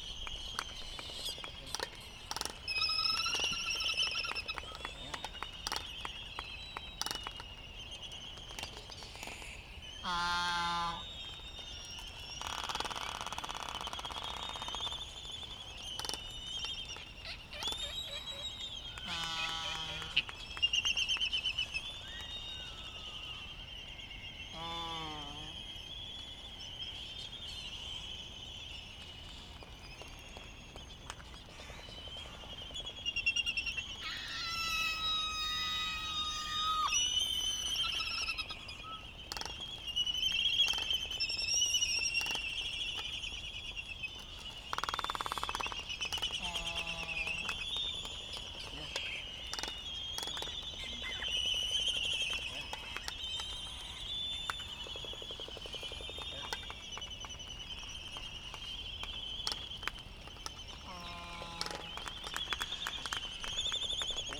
United States Minor Outlying Islands - Laysan albatross dancing ...
Laysan albatross ... Sand Island ... Midway Atoll ... birds giving it the full display ... sky moos ... whistles ... whinnies ... preens ... flicks ... yaps ... snaps ... clappering ... open lavalier mics ... not yet light so calls from bonin petrels ... warm with a slight breeze ...
13 March 2012